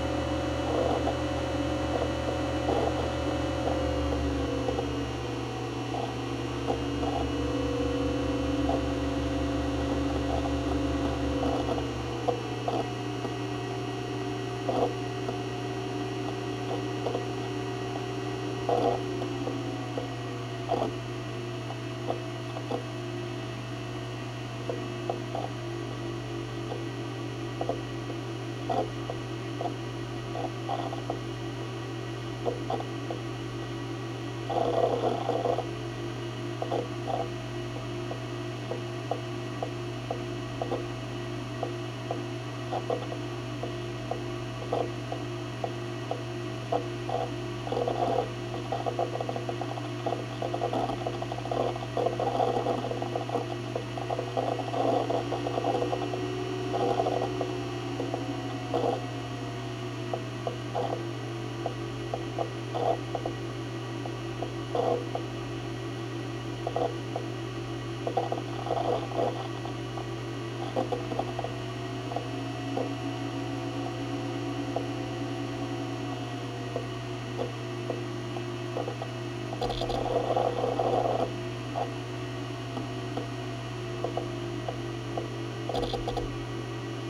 Ln., Sec., Zhongyang N. Rd., Beitou Dist - Open the host computer
Sound computer's hard drive, Zoom H6